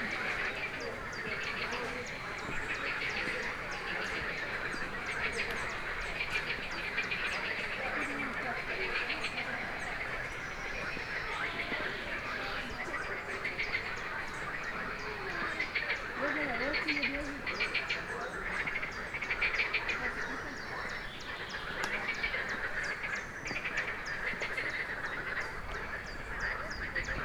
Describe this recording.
lots of frogs at a lake in plitviĉka jezera national park.